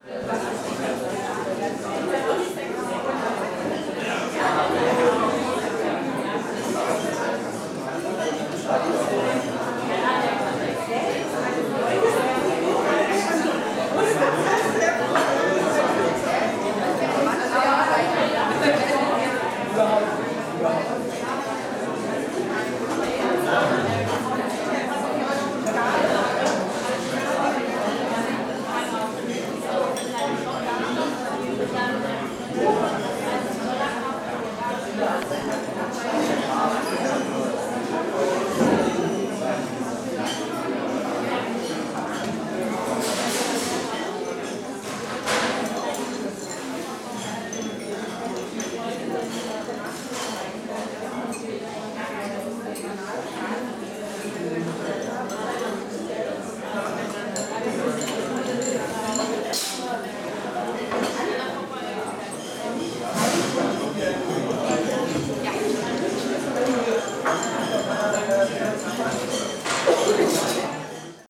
Köpenicker Allee, Berlin, Deutschland - Canteen Mensa KHSB
Lunchtime at University, Olympus LS 5